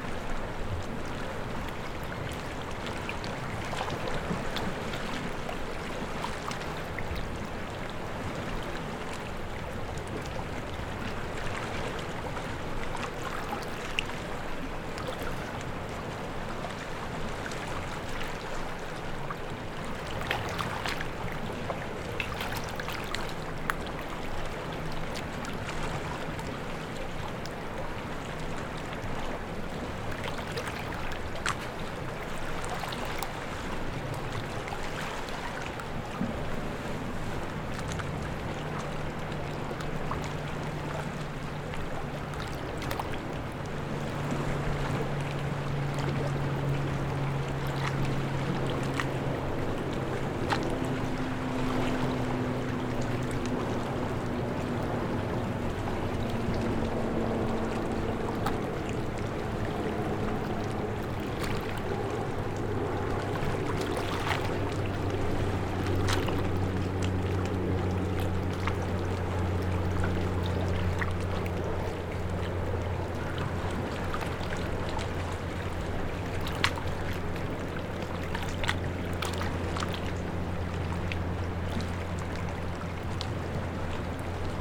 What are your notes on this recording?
Capo Di Feno Beach Sound, Captation ZOOM H6